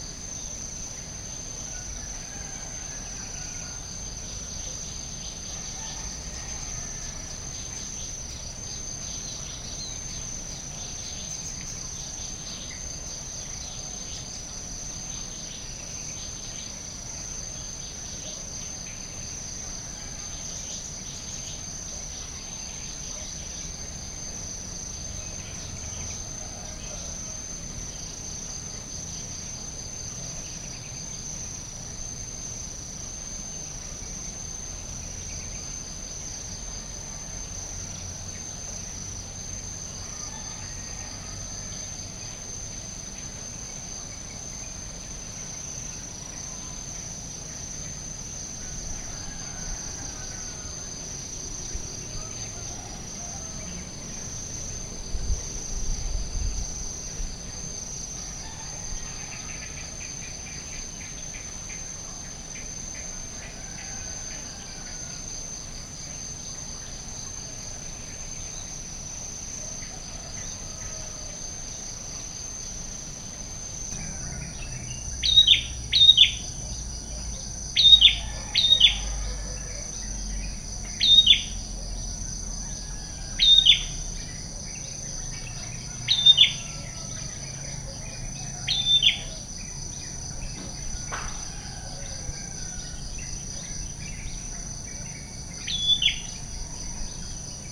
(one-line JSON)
{"title": "Ubud, Gianyar, Bali, Indonesia - Balinese Tree Frogs in the Rain", "date": "2010-01-07 06:00:00", "description": "early morning frogs in the rain, off the porch of a very quiet hotel on the outskirts on Jl Raya in the neighborhood of Ubud, Bali. recorded on Sony minidisc recorder MZ-NH1.", "latitude": "-8.52", "longitude": "115.26", "altitude": "184", "timezone": "Asia/Makassar"}